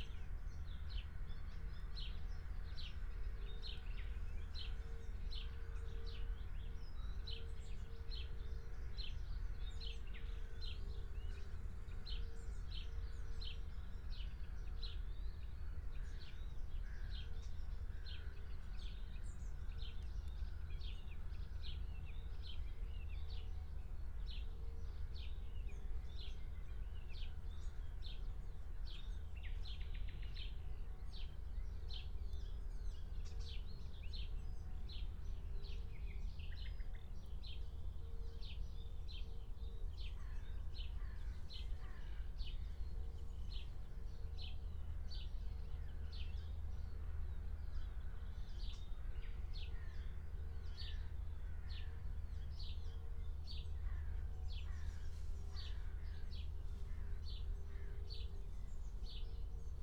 Berlin, Tempelhofer Feld - former shooting range, ambience
07:00 Berlin, Tempelhofer Feld
2 June 2020, 07:00